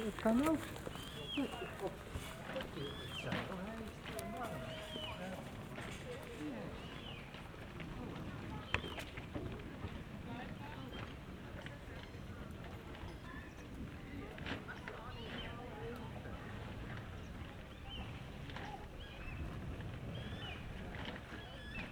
workum, het zool: marina, promenade - the city, the country & me: marina, promenade
approaching thunderstorm
the city, the country & me: august 4, 2012